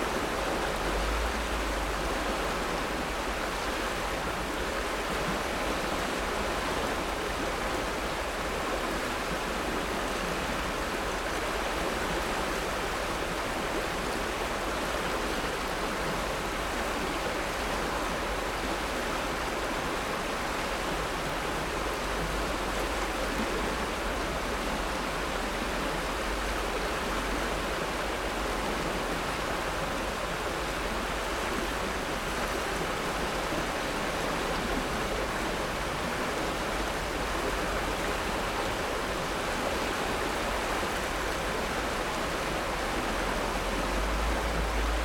Bow River bend near Banff
high water flow on a side tributary of the Bow River